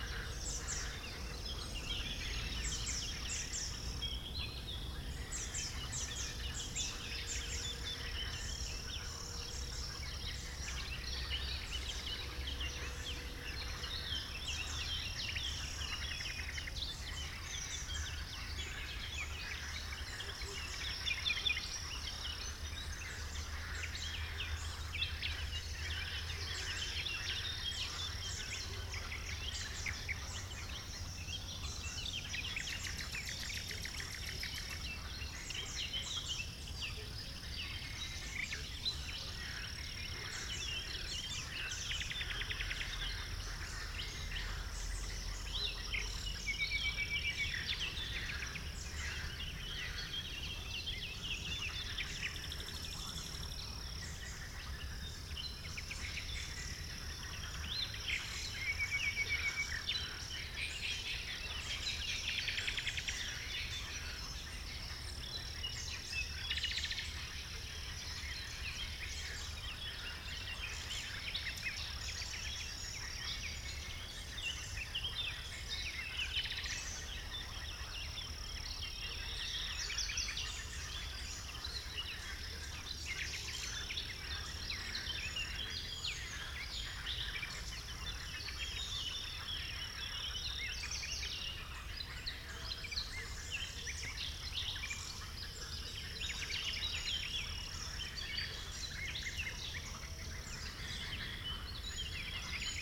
Chindrieux, France - Forêt foisonnante
La vie foisonnante de la forêt de Chautagne, oiseaux, grenouilles, insectes le matin.